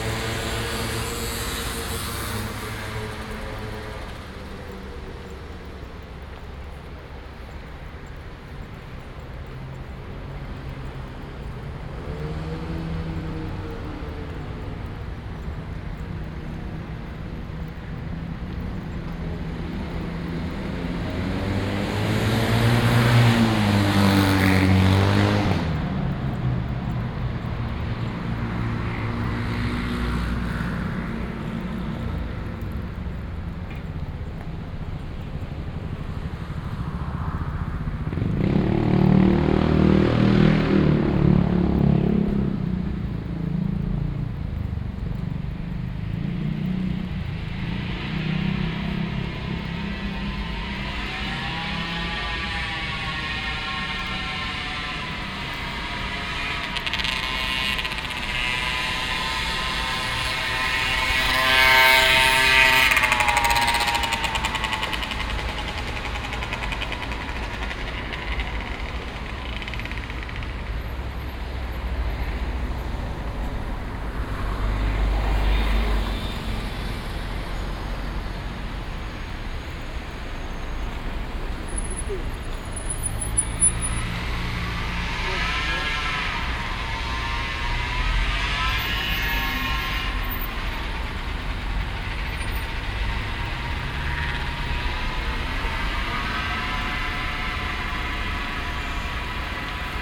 Av. General Marvá, Alicante, Spain - (19 BI) Walk through a busy promende
Binaural recording of a walk through General Marvá from Castel towards Marina.
Plenty of traffic, bikes engines, buses, some fountains on the way, etc.
Recorded with Soundman OKM + Zoom H2n
Alacant / Alicante, Comunitat Valenciana, España